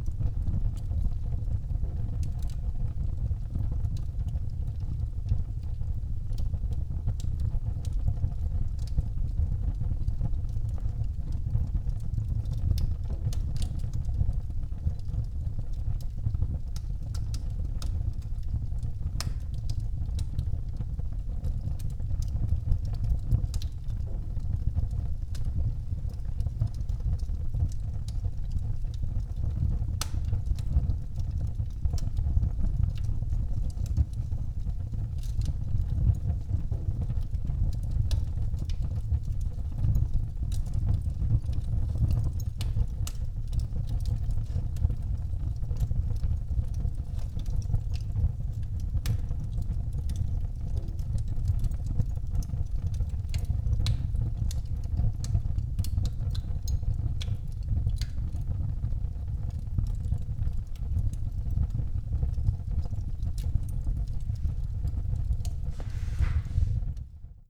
an old iron furnace heating up the room
(Sony PCM D50, Primo EM172)